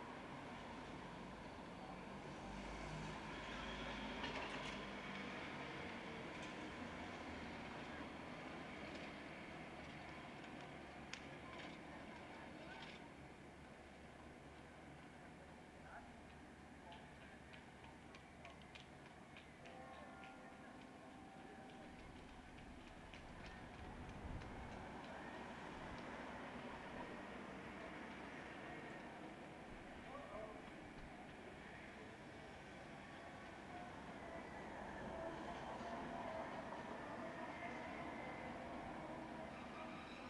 Siege Bell, Valletta, Malta - Siege Bell
the siege bell war memorial, valetta, malta.